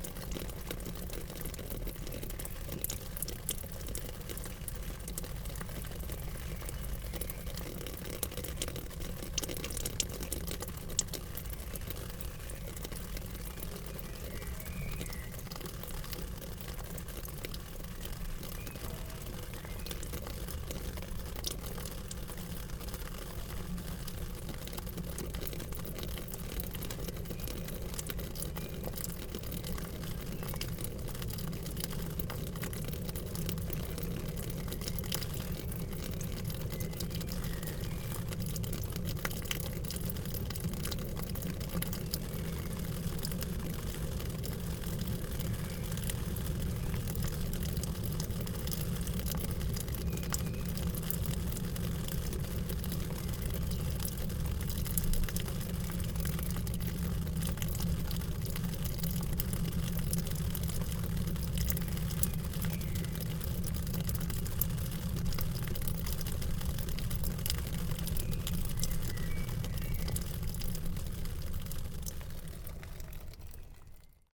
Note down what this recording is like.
soundmap cologne/ nrw, hinterhof atmosphäre mittags, wasser läuft in regentonne, project: social ambiences/ listen to the people - in & outdoor nearfield recordings